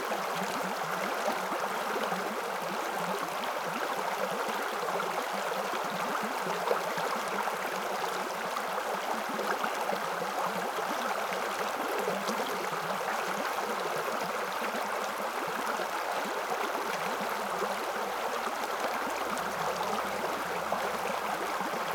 Polenz valley, near Hohnstein, Deutschland - river Polenz water flow
Polenztal, river Polenz in its deep valley, sound of water flowing and gurgling
(Sony PCM D50)
Hohnstein, Germany, 20 September 2018, 11:35